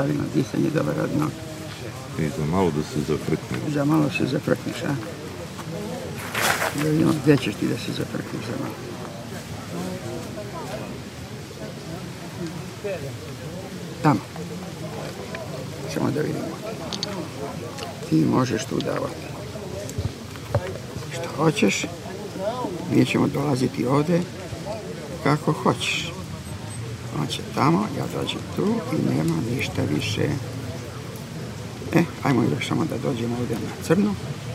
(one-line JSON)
{"title": "Kalemegdan, (Chess players) Belgrade - Sahisti (Chess players)", "date": "2011-06-15 16:37:00", "latitude": "44.82", "longitude": "20.45", "altitude": "117", "timezone": "Europe/Belgrade"}